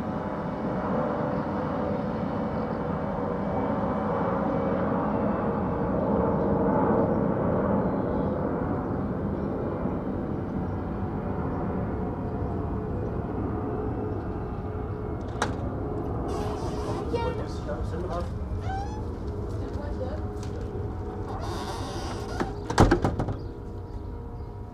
Epicerie du Platane, Niévroz, France - Place ambience, 11am.
cars, bells, door slamming.
Tech Note : Sony PCM-M10 internal microphones.
France métropolitaine, France, 24 July 2022